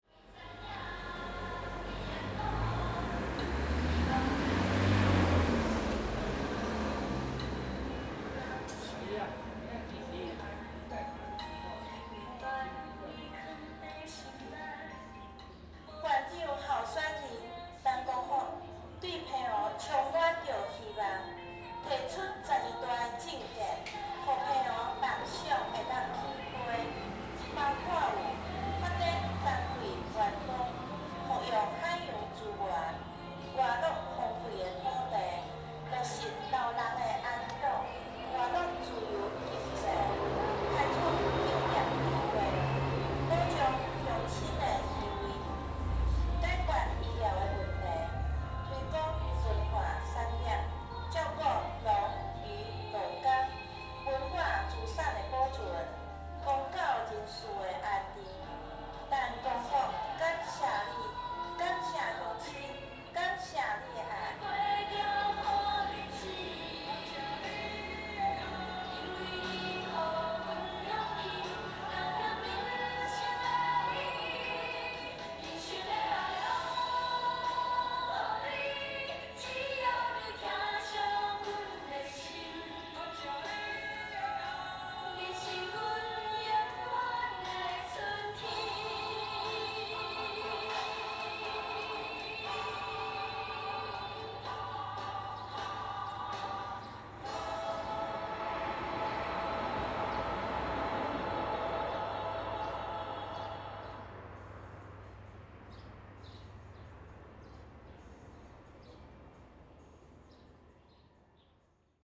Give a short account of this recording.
in front of the temple, Election propaganda vehicles, Traffic Sound, Close to school, Zoom H2n MS+XY